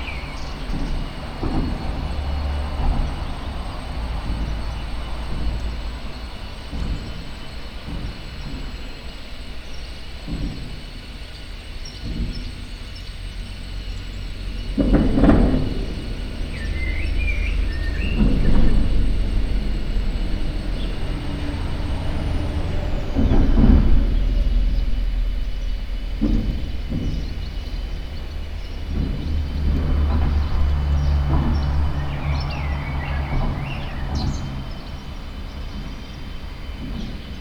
Vallbona d'Anoia, Barcelona, Spain - 2014-04-17 Calafou, outdoors
An outdoor take of sound from the Eco-Industrial, Post-Capitalist colony of Calafou.